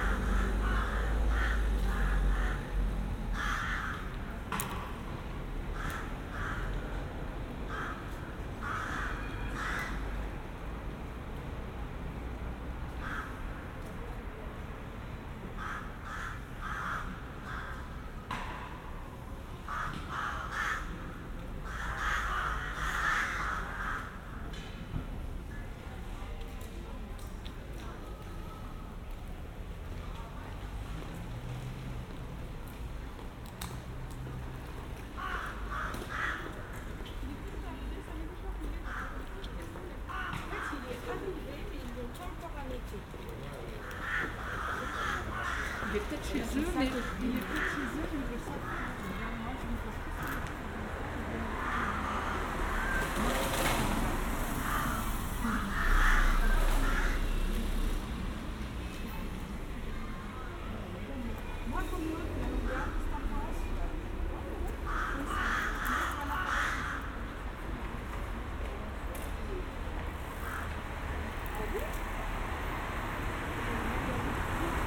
Place dAusterlitz, Strasbourg, Frankreich - evening ambiance
evening atmosphere at lockdown. ravens and playing children. cars now and then.
sennheiser AMBEO smart headset
France métropolitaine, France, 7 November 2020, 17:50